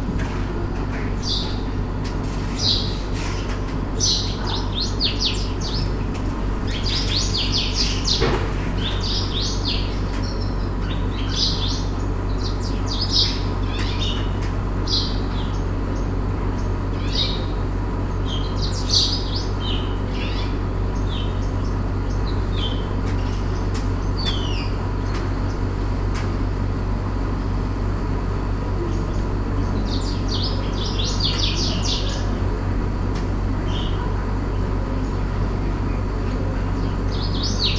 Rue Talaa Sghira, Fes, Morocco - House buntings in Fez medina
Morning atmosphere. Close house buntings (sitting on house roofs). Distant voice. A fan starts around 1 min.
Bruants du Sahara au matin et voix lointaines. Un ventilateur se met en marche vers 1 min.